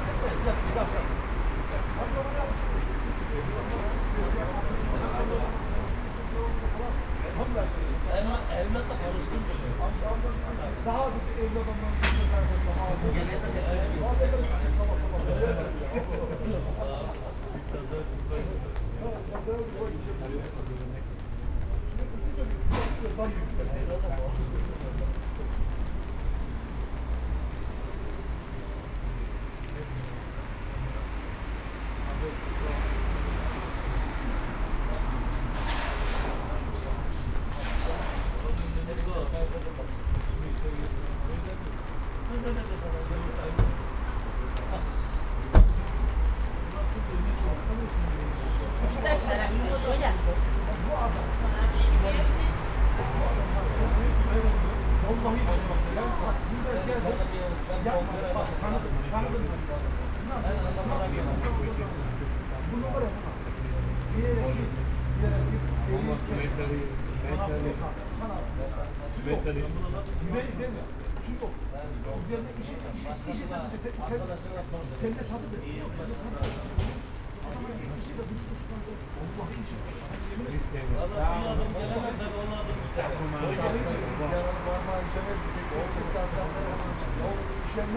berlin, sanderstraße: hinter imbiss, unter sonnenschirm - the city, the country & me: under sunshade of a fried chicken takeaway
people talking in front of fried chicken takeaway, busy staff, it begins to rain
the city, the country & me: july 19, 2012
99 facets of rain
contribution for world listening day